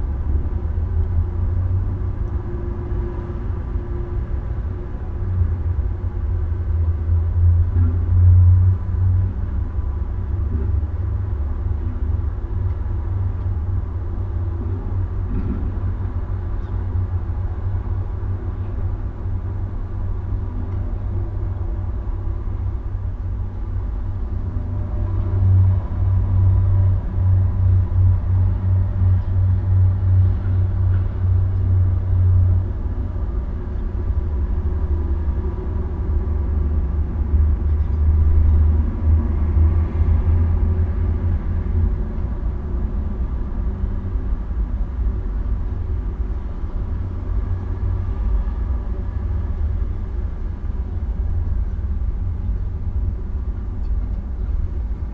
Maribor, Slovenia - one square meter: electrical box
atop the concrete wall sits one ruined electrical box, with various holes in the side in which a small microphone can be placed. all recordings on this spot were made within a few square meters' radius.